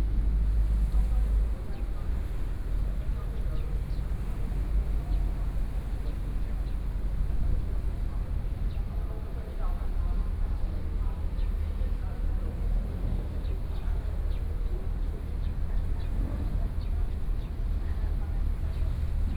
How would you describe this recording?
Traffic Sound, Birdsong, In the nearby marina, Hot weather